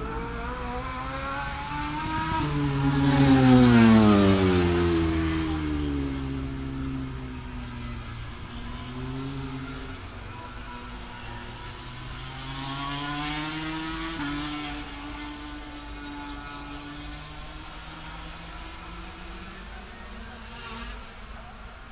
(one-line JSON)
{"title": "Lillingstone Dayrell with Luffield Abbey, UK - british motorcycle grand prix 2016 ... moto grand prix ...", "date": "2016-09-03 13:30:00", "description": "moto grand prix free practice ... Vale ... Silverstone ... open lavalier mics clipped to clothes pegs fastened to sandwich box on collapsible chair ... umbrella keeping the rain off ... very wet ... associated sounds ... rain on umbrella ...", "latitude": "52.07", "longitude": "-1.02", "timezone": "Europe/London"}